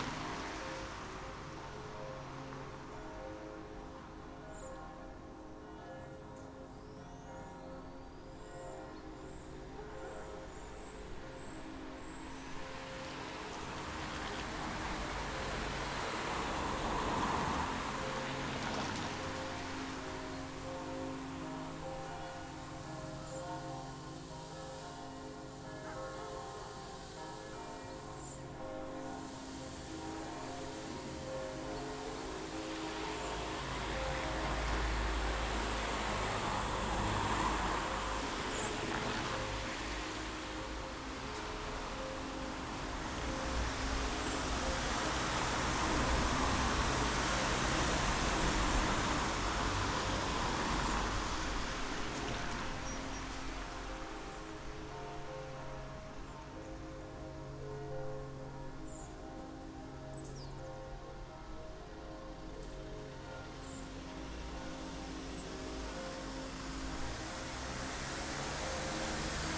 E. van Esbroeckstraat, Londerzeel, België - Church bells, cars passing by and a dog
Church bells, cars passing by and a dog
Recorded from rooftop window streetside.
ZOOM H6 XY mic 120° + compression added with Logic ProX